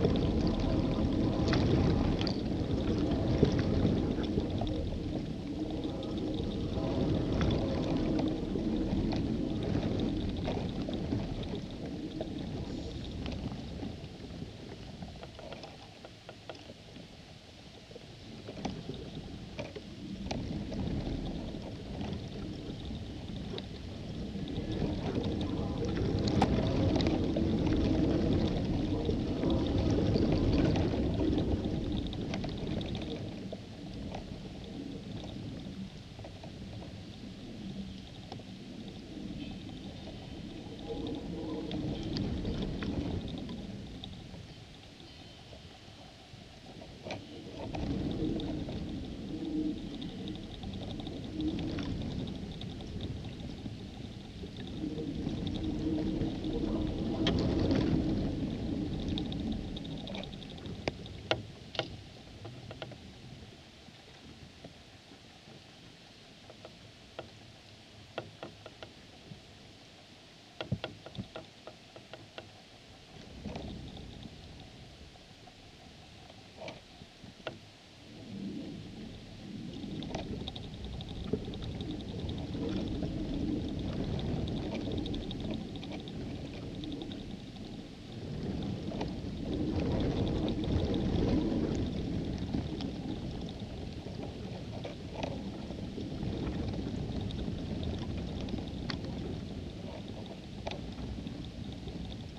Maribor, Slovenia - one square meter: reed and cable
a dead reed and a heavy, rusted iron cable, both originating on the shore but with ends below the surface of the water. the reed vibrates in the wind like an aeolian harp. recorded with contact microphones. all recordings on this spot were made within a few square meters' radius.